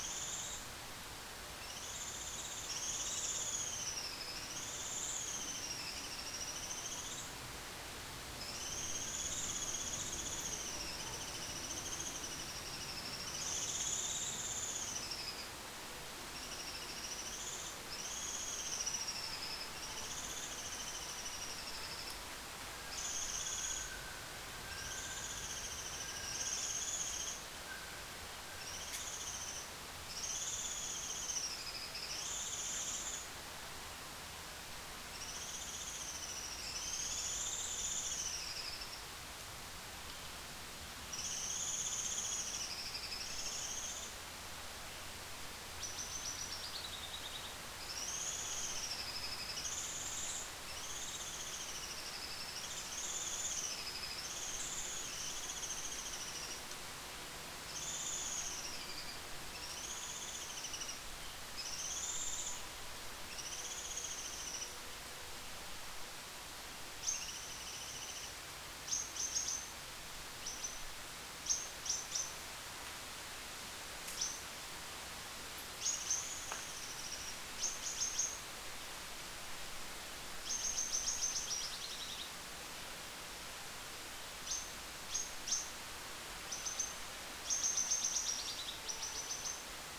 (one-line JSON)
{"title": "Hong Kong Trail Section, High West, Hong Kong - H006 Distance Post", "date": "2018-07-11 12:11:00", "description": "The sixth distance post in HK Trail, located at the north-northeast of High West, filled with trees and a lots of birds rest there . You can hear the unique calling of birds like Fire-breasted Flowerpecker or Yellow-browed warbler.\n港島徑第六個標距柱，位於西高山東北偏北，樹木繁盛，吸引不同雀鳥休息。你可以聽到如紅胸啄花鳥或黃眉柳鶯等的獨特叫聲，可算是鳥語花香。\n#Birds, #Bee, #Plane", "latitude": "22.27", "longitude": "114.14", "altitude": "365", "timezone": "Asia/Hong_Kong"}